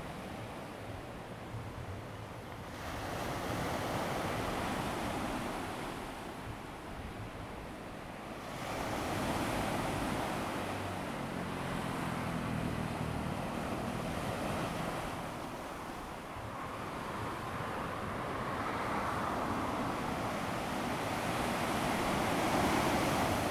Small towns, Traffic Sound, Sound of the waves, Very Hot weather
Zoom H2n MS+XY
Fengbin Township, 花東海岸公路